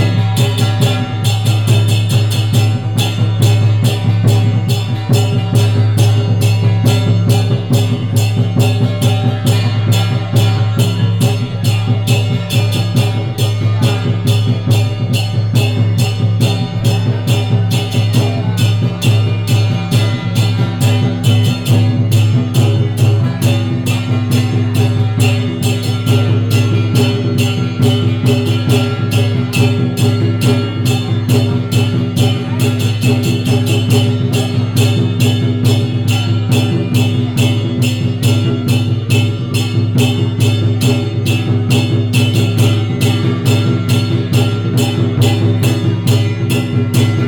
In a small temple, Binaural recordings, Sony PCM D100+ Soundman OKM II
Hsinchu County, Taiwan, 24 September 2017